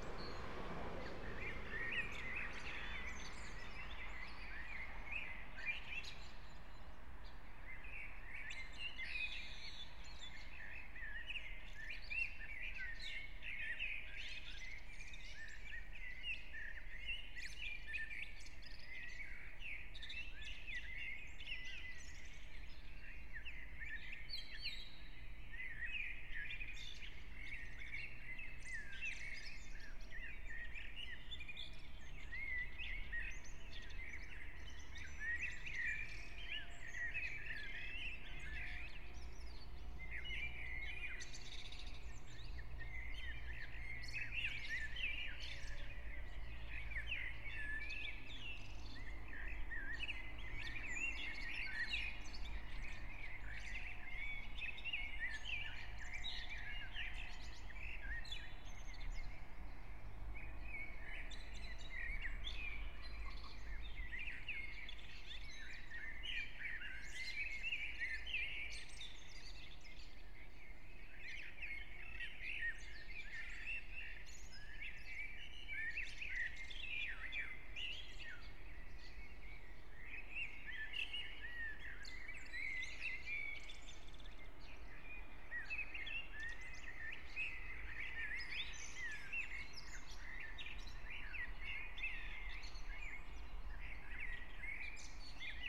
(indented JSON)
{
  "date": "2022-04-26 04:00:00",
  "description": "04:00 Brno, Lužánky - early spring morning, park ambience\n(remote microphone: AOM5024HDR | RasPi2 /w IQAudio Codec+)",
  "latitude": "49.20",
  "longitude": "16.61",
  "altitude": "213",
  "timezone": "Europe/Prague"
}